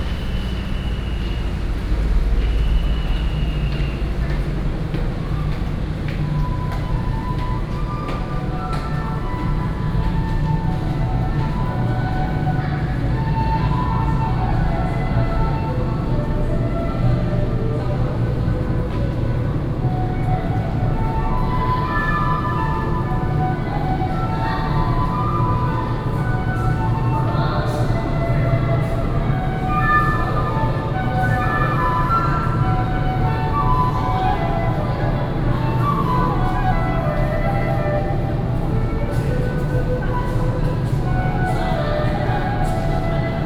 Sec., Fuxing Rd., Taichung City - Walking in the underpass
Walking in the underpass, Air conditioning noise, Street performers, Footsteps, traffic sound
Taichung City, Taiwan, September 2016